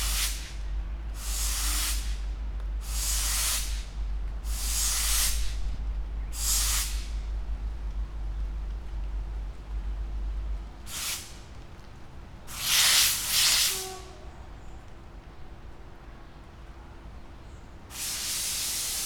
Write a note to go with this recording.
Man washing a car with a power washer. Swishes of the pressurized water reverberate of the walls of the 12 floor buildings nearby. (sony d50)